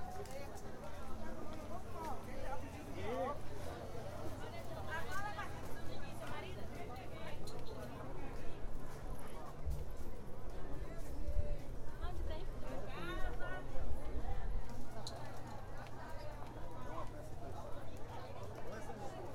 Trabalho Realizado para a disciplina de Sonorização I- Marina Mapurunga- UFRB
Liz Riscado
Brazil, 19 January, 06:26